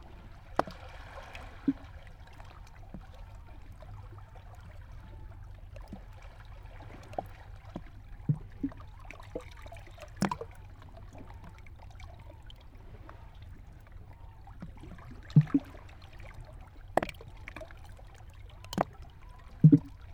{
  "title": "Patmos, Liginou, Griechenland - Meeresstrand, Felsen 03",
  "date": "2003-05-09 10:26:00",
  "description": "Wasser, Glucksen\nMai 2003",
  "latitude": "37.35",
  "longitude": "26.58",
  "timezone": "Europe/Athens"
}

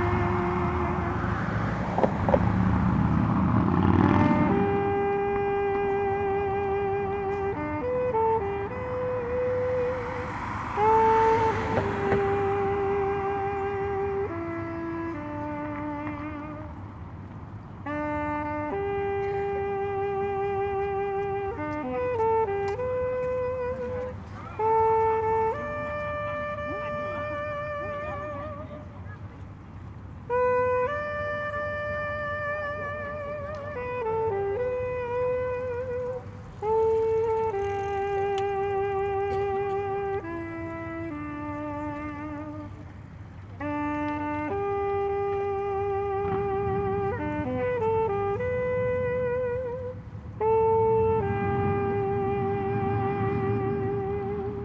{
  "title": "Hamburg, Deutschland - Street musician",
  "date": "2019-04-19 10:45:00",
  "description": "A bad street musician playing in a noisy street.",
  "latitude": "53.54",
  "longitude": "9.98",
  "altitude": "1",
  "timezone": "Europe/Berlin"
}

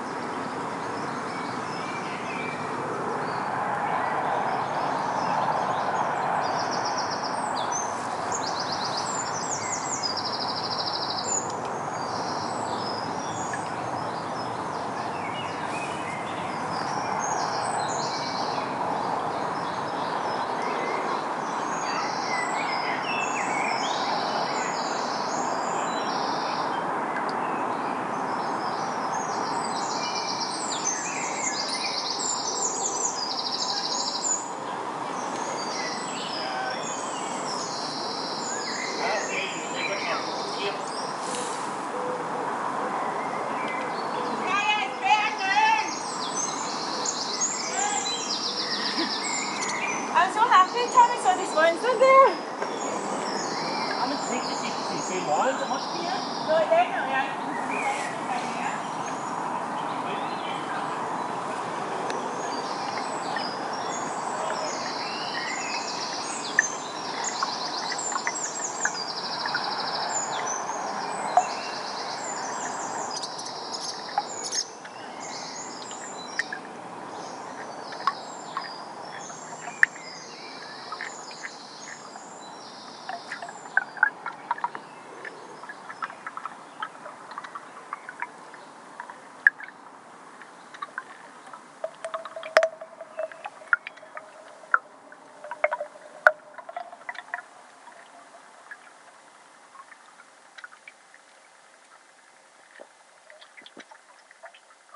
{
  "title": "Cadder, Glasgow, UK - The Forth & Clyde Canal 002",
  "date": "2020-06-23 21:15:00",
  "description": "3-channel live-mix with a stereo pair of DPA4060s and an Aquarian Audio H2a hydrophone. Recorded on a Sound Devices MixPre-3.",
  "latitude": "55.93",
  "longitude": "-4.19",
  "altitude": "51",
  "timezone": "Europe/London"
}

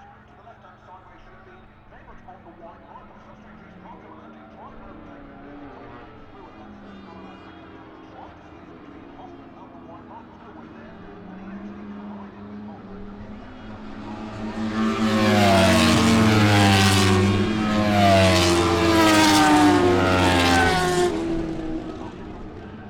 {
  "title": "Unnamed Road, Derby, UK - British Motorcycle Grand Prix 2004 ... qualifying ...",
  "date": "2004-07-24 13:50:00",
  "description": "British Motorcycle Grand Prix 2004 ... qualifying part one ... one point mic to minidisk ...",
  "latitude": "52.83",
  "longitude": "-1.37",
  "altitude": "74",
  "timezone": "Europe/London"
}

